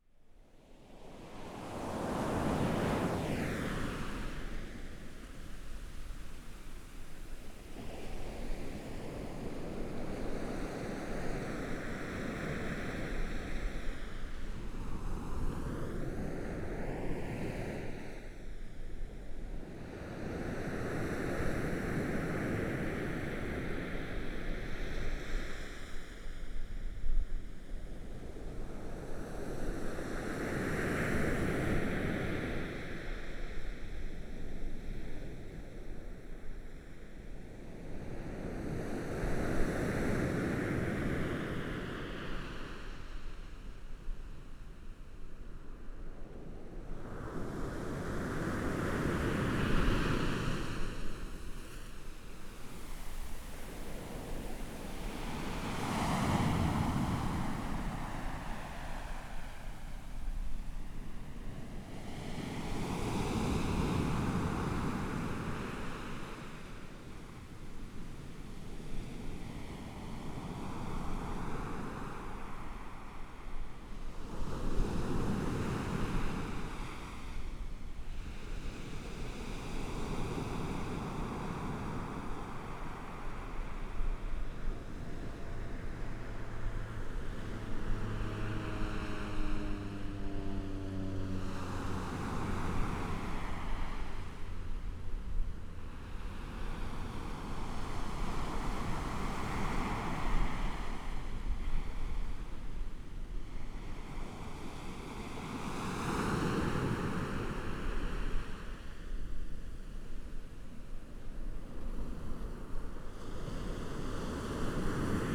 北濱公園, Hualien City - Sound of the waves
Sound of the waves, Binaural recordings, Zoom H4n+Rode NT4 + Soundman OKM II